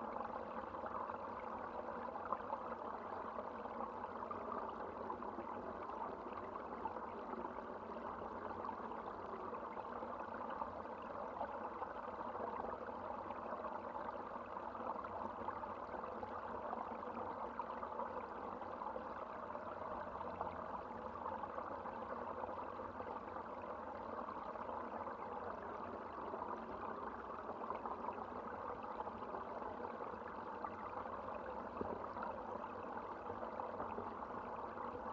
Water resonating in the pond's outlet pipe, Údolní, Praha, Czechia - Hydrophone recording of the water resonating in the outlet pipe
The same sound as above but recorded underwater with a hydrophone.
Praha, Česko